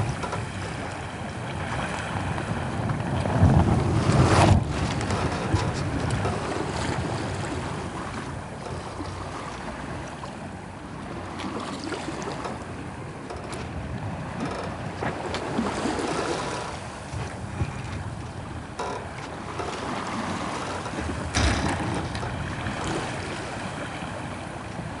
Sound of Eigg - The Sound of Eigg: Sailing (Part 2)
Recorded with a stereo pair of DPA 4060s and a Sound Devices MixPre-3
UK, 2 July 2019